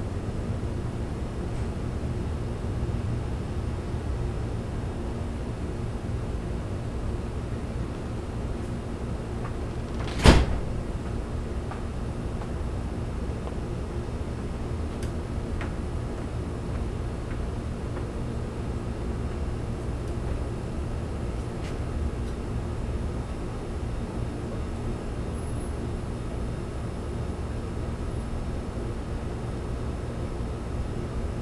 W York St, Savannah, GA, USA - In Front of a Basement
This was a recording of an outdoor sitting area outside of a basement in Savanna, GA. This was a (nearly) 200-year-old house, complete with multiple stories and a basement. I don't exactly remember, but I believe the house number was 311 (I could be mistaken). The owners of this house regularly rent it out to people staying temporarily, and I was here for a family event on two 98-degree days in spring. The specific place where this was captured was also filled with various pieces of large, noisy outdoor equipment, mostly AC vents. This recording captured the general soundscape of the area, which included some typical urban sounds, some scattered noises in the background, and, of course, the aforementioned fans. The door also opened multiple times (thankfully people were quiet!), and towards the end of the recording you can hear a couple of children and an adult trying to get my attention from the nearby overhead balcony.
26 May 2019